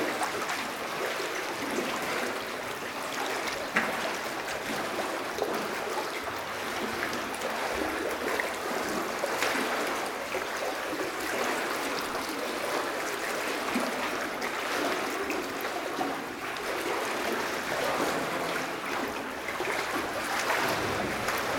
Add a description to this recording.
In an underground mine, to get into the "Butte" mine, we are forced to walk in a 1m30 deep water. It could get hard for material...